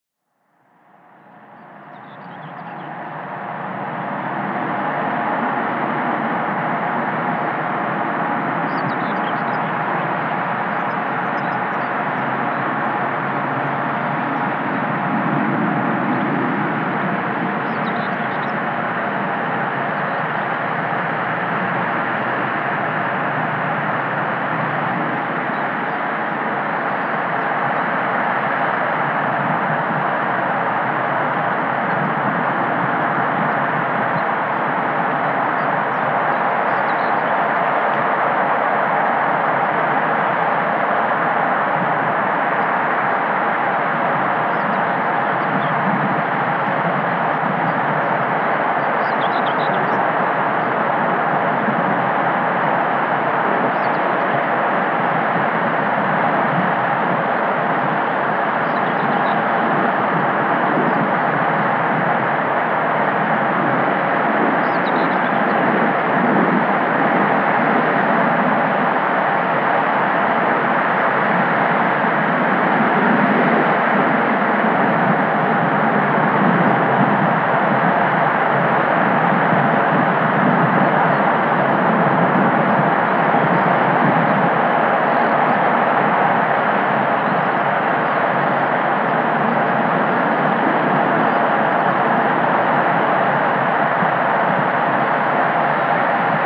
Emmerthal, Germany NUCLEAR POWER PLANT (Grohnde) - SOUND RECORDINGS OF NUCLEAR POWER PLANT (Grohnde)
A sound recordings of the Power Plant from the right side of the Weser river. A distance from my location to the Power Plant was around 500m. I used a narrow band microphone for recordings where the microphone is pointing at. Some birds were flying around me, which are also hearable inside the recording.
ZOOM H4n PRO Handheld Recorder
AT 897 Microphone
Niedersachsen, Deutschland, 22 October, ~15:00